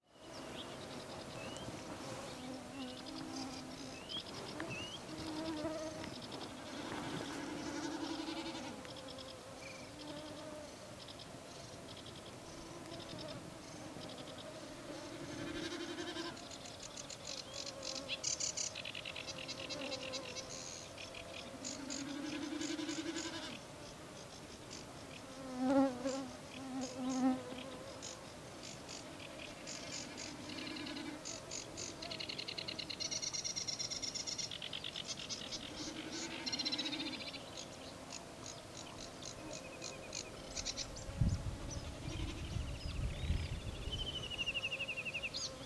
Common Snipe flying in the bog: Estonia
Common Snipe flying in the bog at night in Estonia